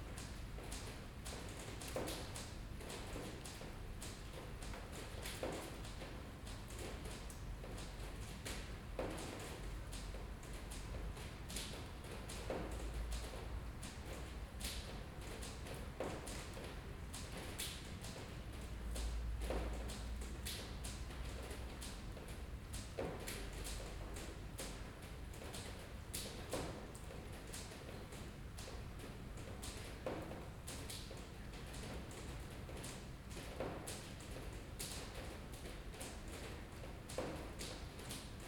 Raining in A Coruña (Spain)
Raining in A Coruña recorded from a seventh floor. The microphone was pointing at a inner courtyard.